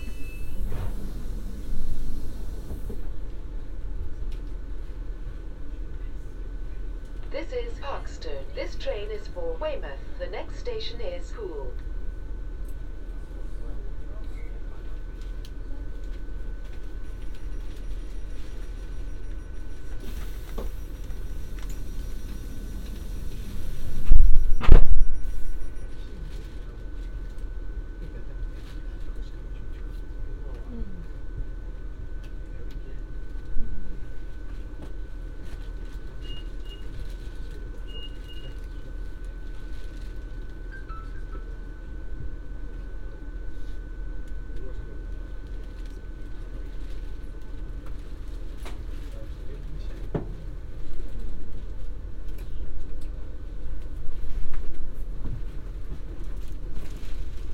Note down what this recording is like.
A ten minute meditation sitting on the Quiet Coach of a train from London Waterloo to Weymouth. (Binaural PM-01s with Tascam DR-05)